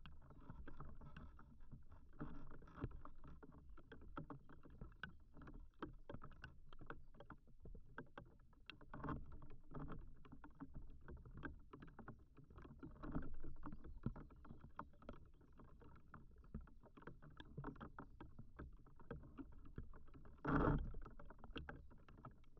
contact microphones on a single reed
Lithuania, 5 July 2017, 14:45